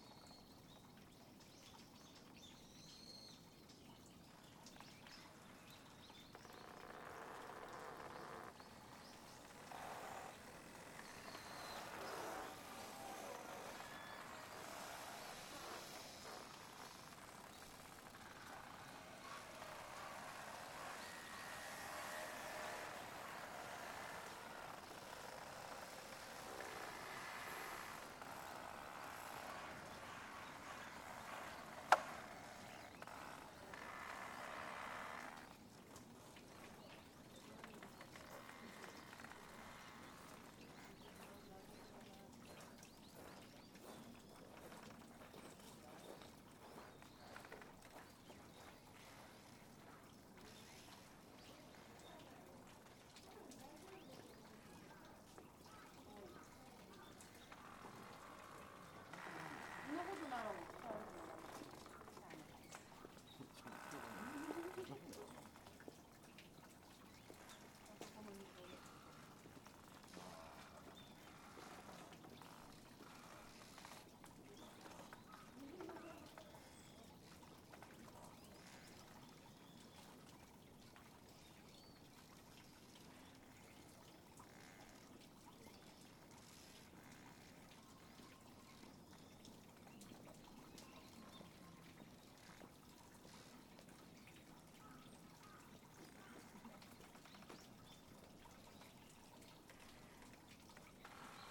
Miyaji Motomachi, Fukutsu, Fukuoka, Japan - Banners in Light Breeze at Miyajidake Shrine
An array of banners mounted on long bamboo canes turn in the breeze.